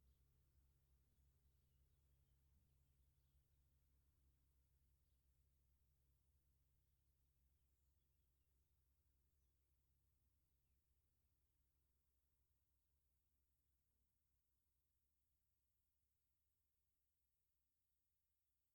Rue de l'Église, Flines-lès-Mortagne, France - Flines-Lez-Mortagne (Nord) - église
Flines-Lez-Mortagne (Nord)
église - Tintement manuel cloche grave